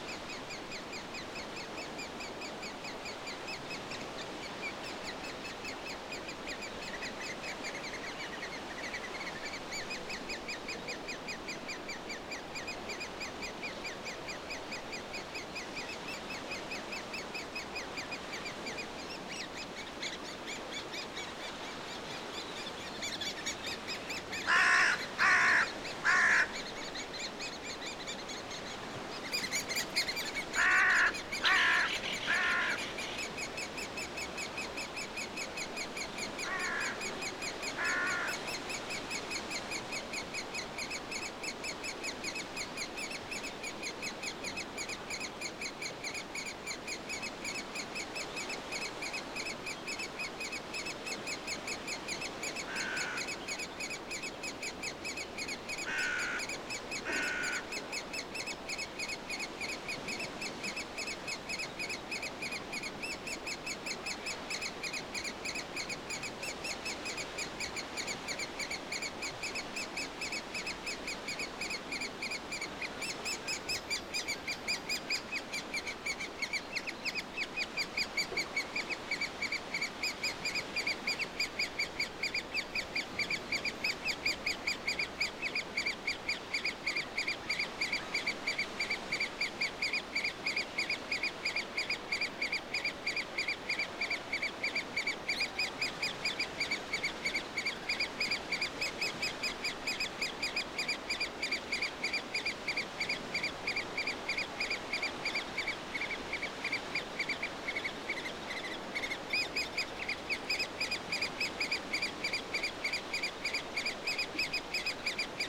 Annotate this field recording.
Lesser-spotted woodpeckers nest. Young chicks in Silver birch nest. Crows. Sea background. Rode NT4